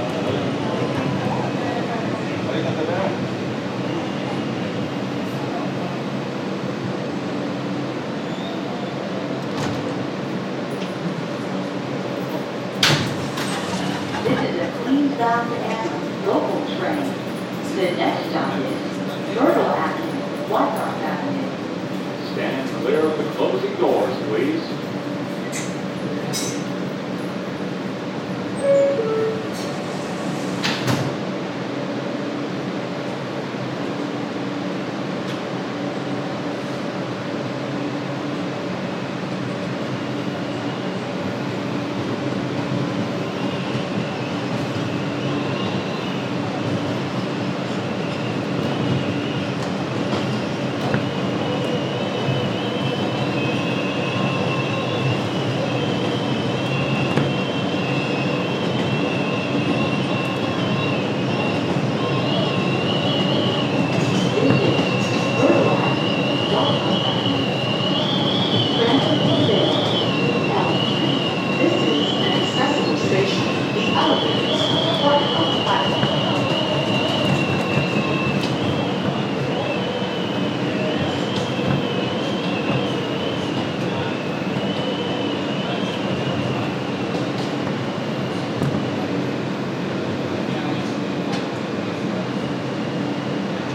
Myrtle Ave, Brooklyn, NY, USA - M Train, windy night
Sounds of wind inside the M train. Train announcements.
Leaving the train at Forest ave.
United States, 18 March 2022, 23:28